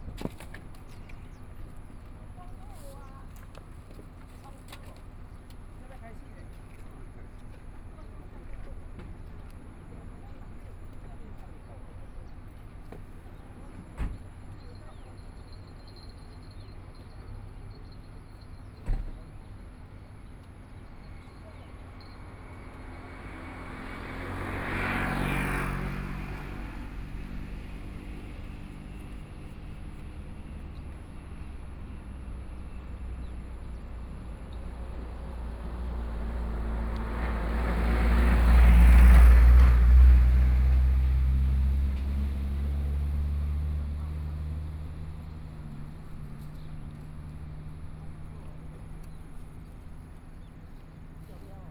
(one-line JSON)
{"title": "頭城鎮新建里, Yilan County - At the roadside", "date": "2014-07-26 17:56:00", "description": "Fishing in the stream, Traffic Sound\nSony PCM D50+ Soundman OKM II", "latitude": "24.85", "longitude": "121.82", "altitude": "2", "timezone": "Asia/Taipei"}